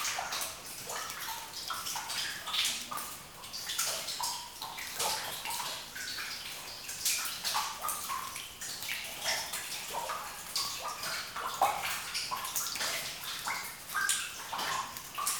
Audun-le-Tiche, France - Two levels mine
In a two levels pit between the grey level and the red level (coulours of stones), the sound of water.